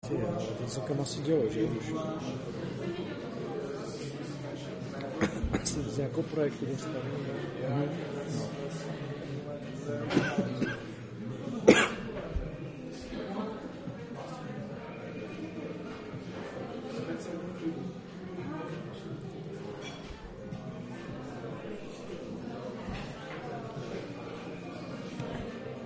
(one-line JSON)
{"title": "Czech Rep., Olomouc, Mariánská 4, St. Wencelas Restaurant", "date": "2011-02-10 17:00:00", "description": "the interior of St. Wencelas Restaurant", "latitude": "49.60", "longitude": "17.26", "altitude": "232", "timezone": "Europe/Prague"}